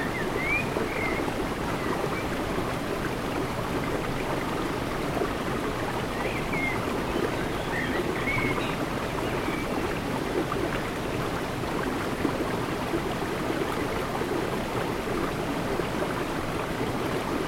Mnt des Moulins, Serrières-en-Chautagne, France - Eaux vives
Les eaux vives de Lapeyrouse au dessus de Serrières en Chautagne, source d'énergie des moulins d'antan.